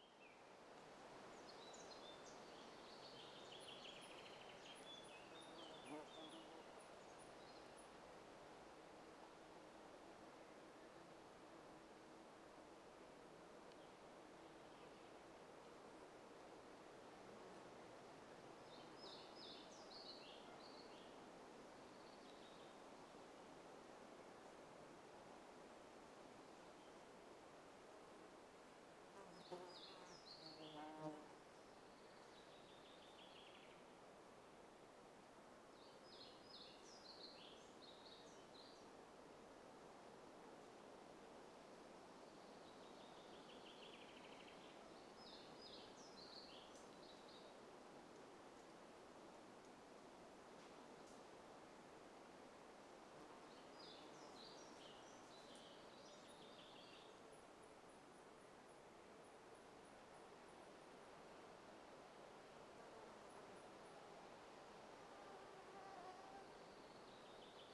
Lithuania, Stabulankiai, at Stabulankiai holystone
Some kind of very hidden holy stone in geological reservation/ swamp